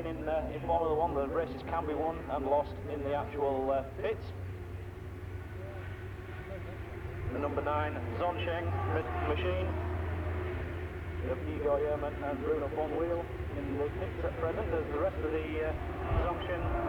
fim world endurance championship 2002 ... practice ... one point stereo mic to minidisk ...
Towcester, United Kingdom, 19 May